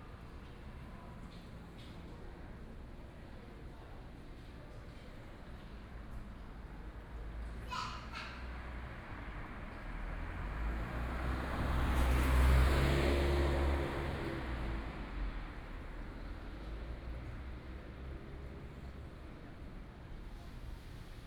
Gongqian Rd., Gongguan Township - In front of the temple
traffic sound, In front of the temple, Small village, Binaural recordings, Sony PCM D100+ Soundman OKM II